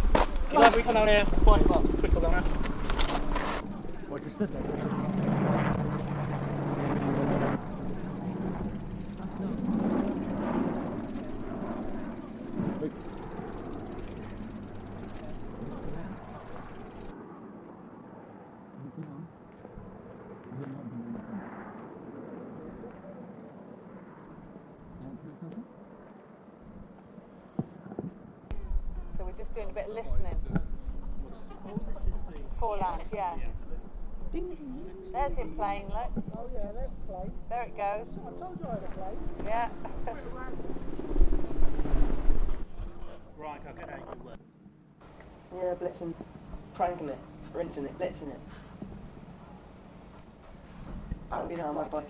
a walk we took around Sherborne
Foreland - a sound walk we took